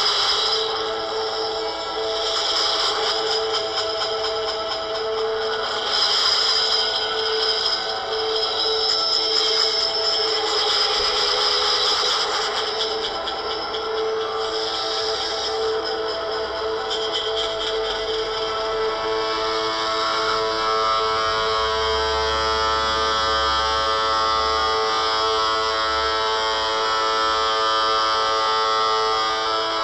hobrechtstraße: in front of pub bürkner eck - bring it back to the people: standing waves by HOKURO - transistor radio in front of pub bürkner eck
transistor radio on the pavement during the transmission of the aporee event >standing waves< by HOKURO on fm 100
HOKURO are Sachiyo Honda, Sabri Meddeb, Michael Northam (accordion, objects, strings, winds, voices and electronics)
... we invite you to participate by playing with us on any kind of instrument or voice that can sustain an A or E or equalivant frequency - the idea is to try to maintain and weave inside a river of sound for as long as possible ... (from the invitation to the concert at radio aporee berlin, Nov. 28 2009)
Berlin, Germany, 28 November 2009, 18:54